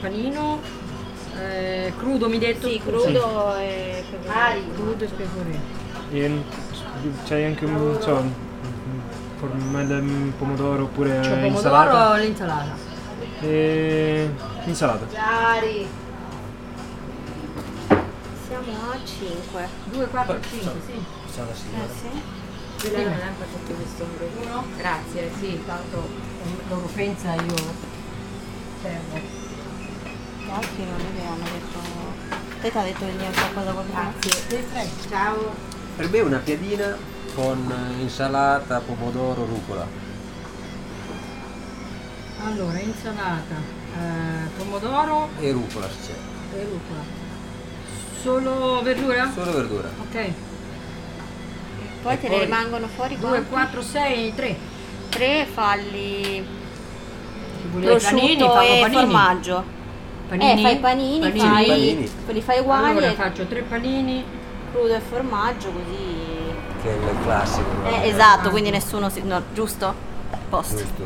Corso Roma, Serra De Conti AN, Italia - inside caffé italia
ambience of the small bar, wooden walls, people ordering some meals, radio and refrigeration fans on the background.
(xy: Sony PCM-D100)